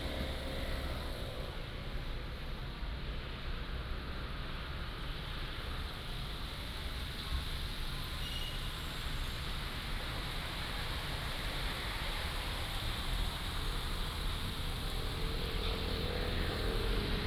Sanmin Rd., Changhua City - In the Plaza
In the Plaza, Traffic sound, fountain